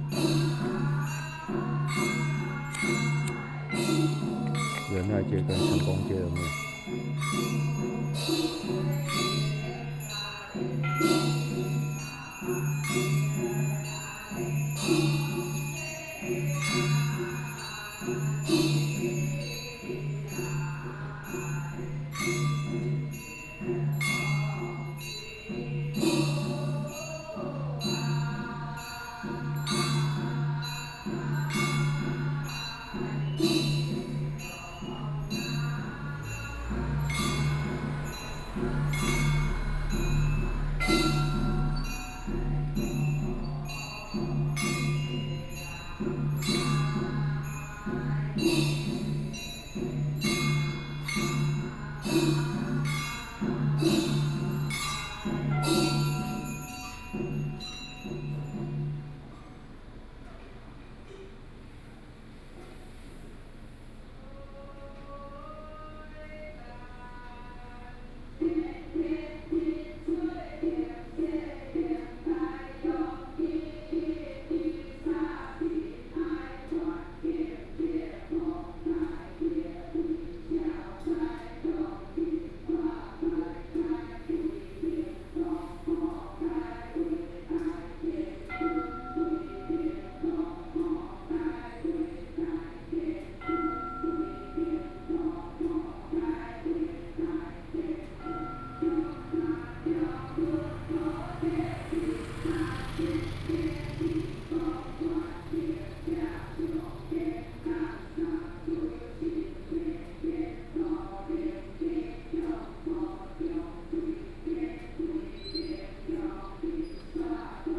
城隍廟, Chenggong St. - In the temple
Morning in front of the temple
Binaural recordings
Hualien County, Taiwan, 29 August 2014